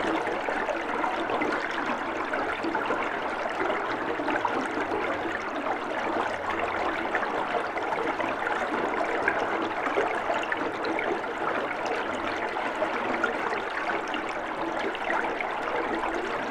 {
  "title": "Differdange, Luxembourg - Water flowing",
  "date": "2017-04-16 11:00:00",
  "description": "Water flowing in a tube, in an old entrance of the mine. This water is pushed up by a pump and fill an enormous tank. This water is intended to cool down metal in the Differdange steelworks.",
  "latitude": "49.52",
  "longitude": "5.88",
  "altitude": "387",
  "timezone": "Europe/Luxembourg"
}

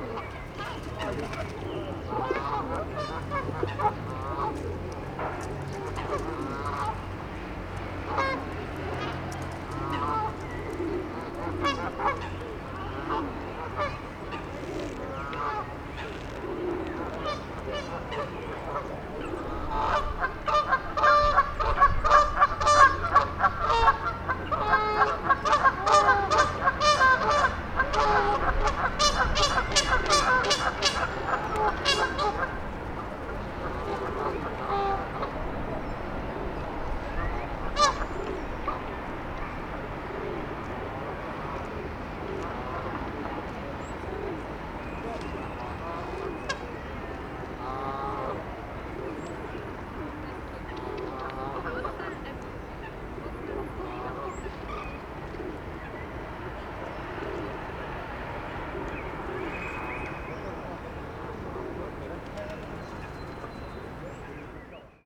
{
  "title": "Lac de Saint Mandé",
  "date": "2010-03-23 16:01:00",
  "description": "Lac de St Mandé\nBelle après-midi du mois de Mars",
  "latitude": "48.84",
  "longitude": "2.42",
  "altitude": "47",
  "timezone": "Europe/Paris"
}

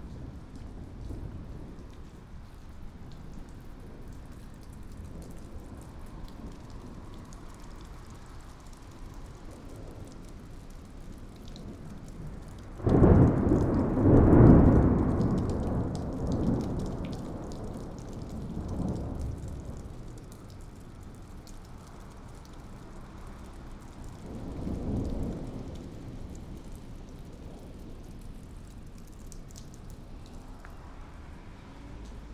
{
  "title": "Nova Gorica, Slovenija - Gromska strela",
  "date": "2017-06-07 17:10:00",
  "description": "Lightning strikes again.",
  "latitude": "45.96",
  "longitude": "13.65",
  "altitude": "102",
  "timezone": "Europe/Ljubljana"
}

